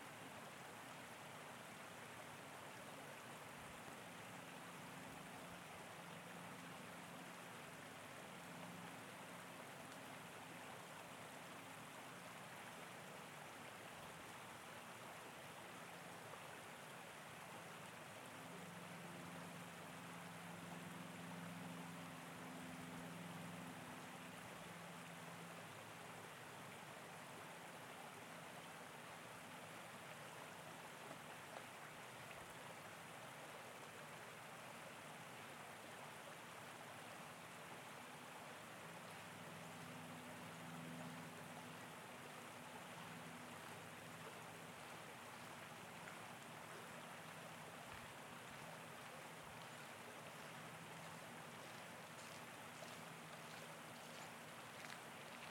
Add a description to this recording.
Winter recording from one of the many bridges spanning Taylor-Massey Creek. Apologies for the considerable wind noise further on; for some reason I totally forgot to put the foamie on the recorder!